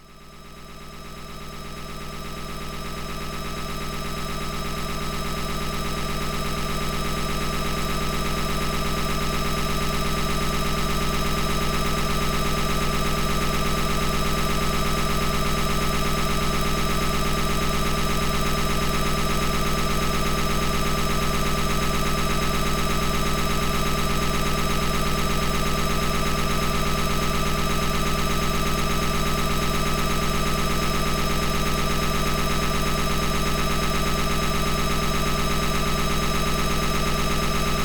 Antalieptė, Lithuania, listening power station electromagnetic
the work of power engines recorded with electromagnetic listening antenna Priezor